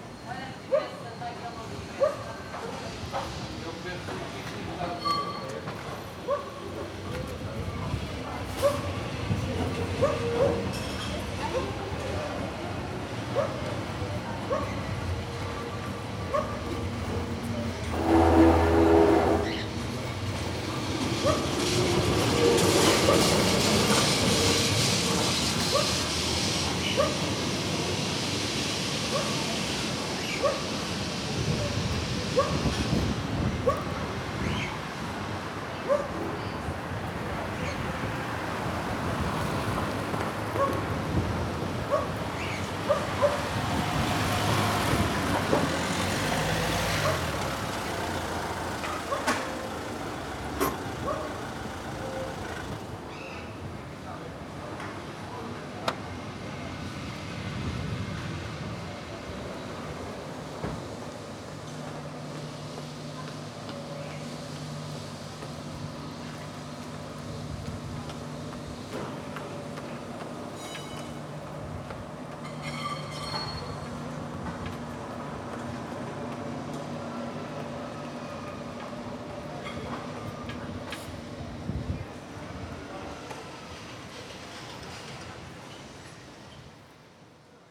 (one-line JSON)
{"title": "Lisbon, Rua do Salvador - in front of cafe do electrico", "date": "2013-09-26 15:58:00", "description": "coming from a back street near cafe do electrico. dog barking, pet birds on balconies, old trams passing by. owner of the cafe, standing in front door talking to somebody inside of the place.", "latitude": "38.71", "longitude": "-9.13", "altitude": "60", "timezone": "Europe/Lisbon"}